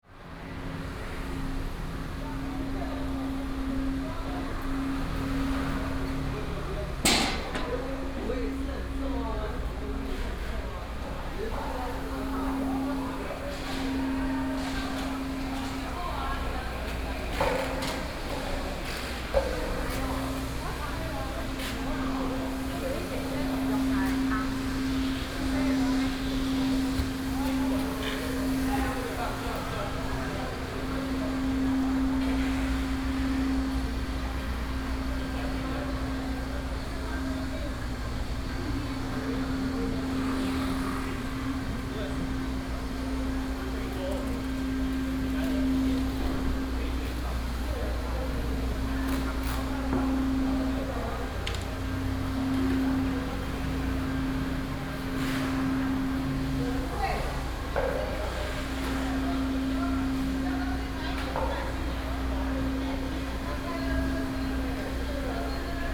仁愛黃昏市場, Luzhu Dist., Taoyuan City - Traditional evening market
Traditional evening market, traffic sound